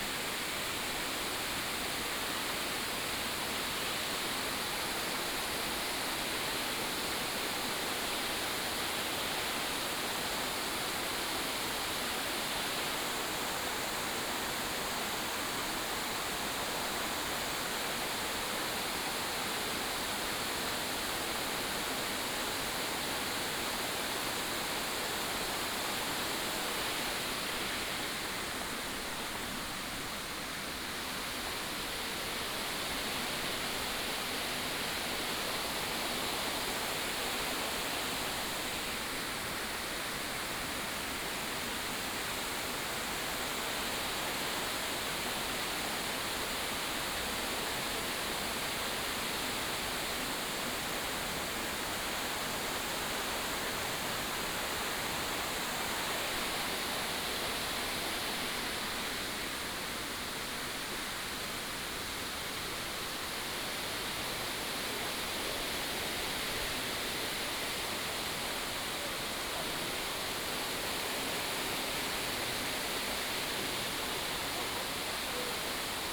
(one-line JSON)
{"title": "得子口溪, Jiaoxi Township, Yilan County - Stream sound", "date": "2016-11-18 11:48:00", "description": "Stream sound\nBinaural recordings\nSony PCM D100+ Soundman OKM II", "latitude": "24.83", "longitude": "121.75", "altitude": "145", "timezone": "Asia/Taipei"}